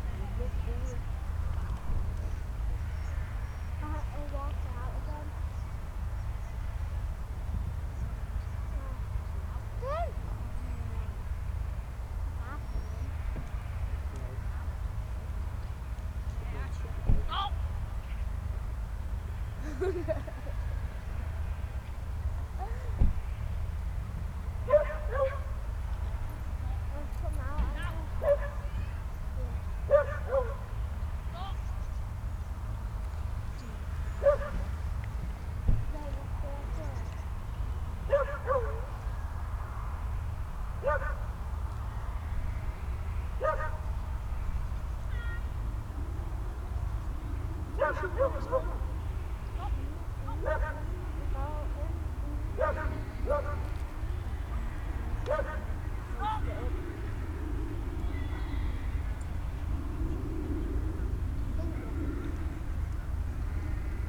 Sheep dog trials ... open lavaliers clipped to sandwich box ... background noises a plenty ... and plenty of comeby and stop there ...